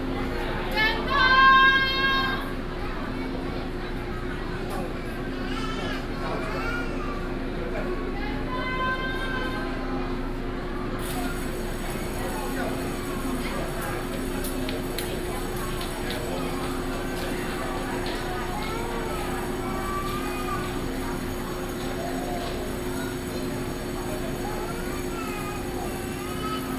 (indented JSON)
{
  "title": "瑞芳火車站, Ruifang Dist., New Taipei City - In the station platform",
  "date": "2012-06-05 15:16:00",
  "description": "In the station platform\nSony PCM D50+ Soundman OKM II",
  "latitude": "25.11",
  "longitude": "121.81",
  "altitude": "60",
  "timezone": "Asia/Taipei"
}